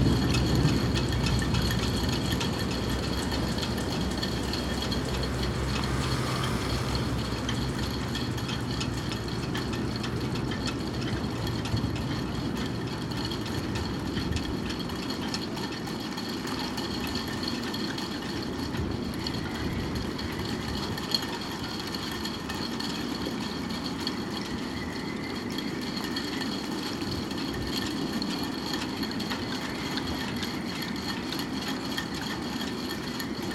Lisbon, Belém, marina - mast symphony
the rigging of the boats jingling and rattling creating a dense pattern. wind whistling among the masts.